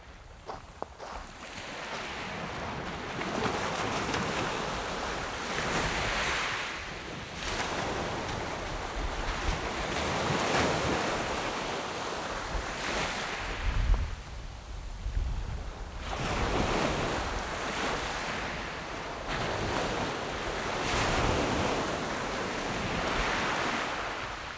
烏崁里, Magong City - Small beach
Small beach, Sound of the waves
Zoom H6 + Rode NT4
Penghu County, Magong City, 23 October 2014